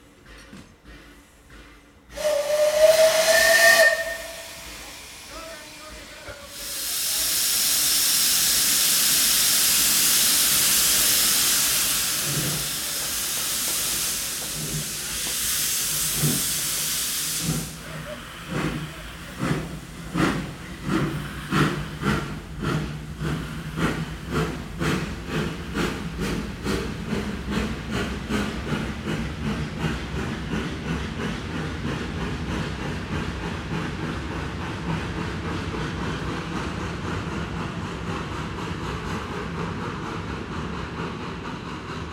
Steam train, Branik Station, Praha-Braník, Praha, Czechia - Steam train, Branik Station